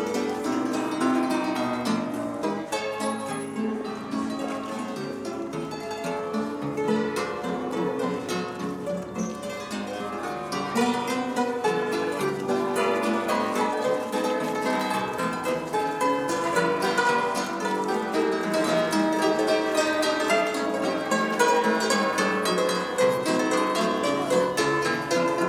Paris, Pont Saint-Louis, street pianola
street musician at the Pont Saint-Louis, near Notre-Dame cathedral.